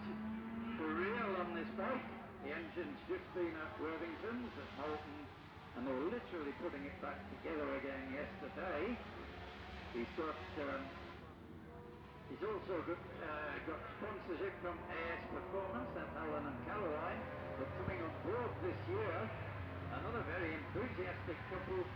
Jacksons Ln, Scarborough, UK - barry sheene classic 2009 ... practice ...
barry sheene classic 2009 ... practice ... one point stereo mic to minidisk ...
23 May 2009